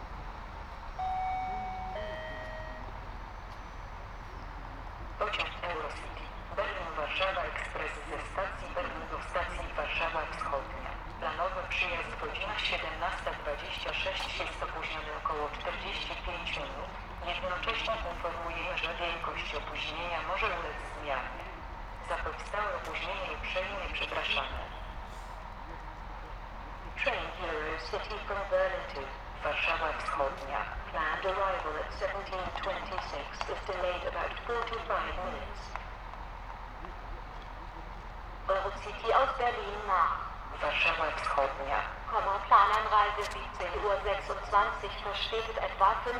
Poznan, main train station, platform - announcements in the cold
delayed trains announcements. hum of a resting train engine in the distance
Poznań, Poland, 15 November 2012